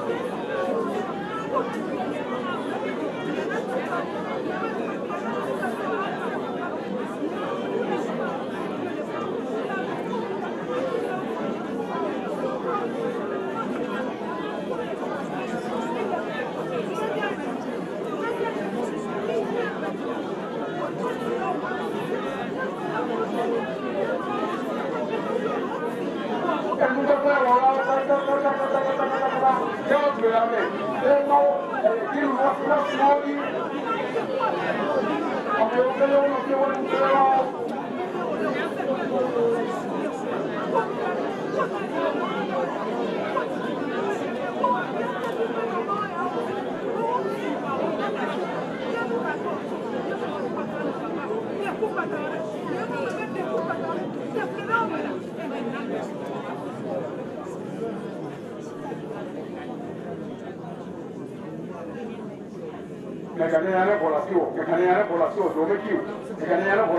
Togbe Tawiah St, Ho, Ghana - church of ARS service: Free praying by the fire
church of ARS service: Free praying by the fire. This is my favourite part where all churchmembers start to share their personal wishes and questions with the Almighty. Surely He is the Greats Multitasker. The sound for me is mesmerizing.
26 August 2004, 18:23